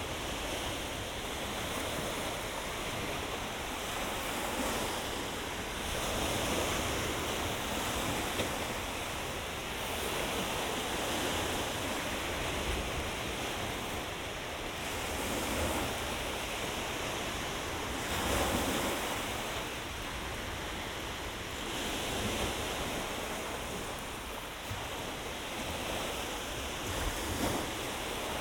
Kukuliškiai, Lithuania, on Dutchmans Cap
Standing on a highest place on Lithuanian seashore: so called The Dutchman's Cap. Just after a heavy rain, so there were rare 10 minutes to be alone on this tourists place. Sennheiser Ambeo headset.
Klaipėdos rajono savivaldybė, Klaipėdos apskritis, Lietuva, 2 July 2021, 14:30